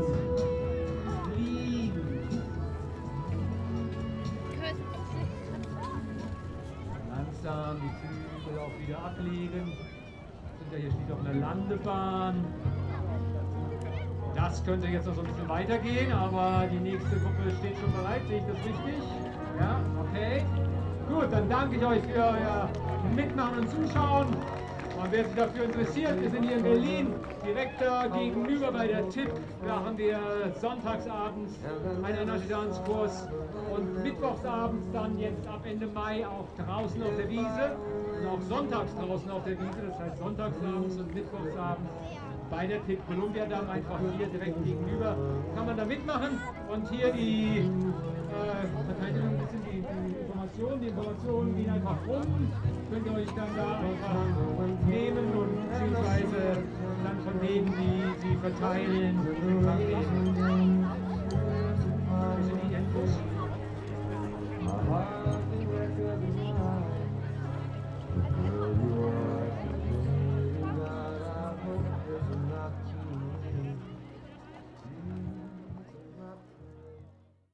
berlin, tempelhofer feld: start-/landebahn - the city, the country & me: runway
strange yoga dance instructor during the opening of formerly tempelhof airport for public
the city, the country & me: may 8, 2010
Germany, 8 May, ~16:00